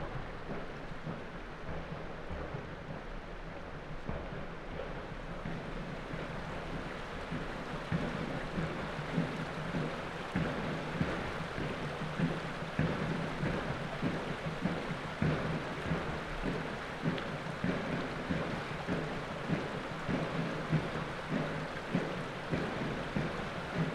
Arcos de Valdevez, firefighters headquarters, music band
Firefighters_music_band, drums, water, river, Arcos_de_Valdevez